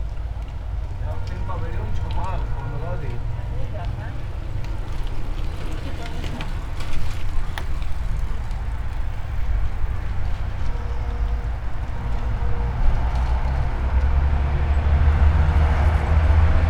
all the mornings of the ... - aug 18 2013 sunday 10:39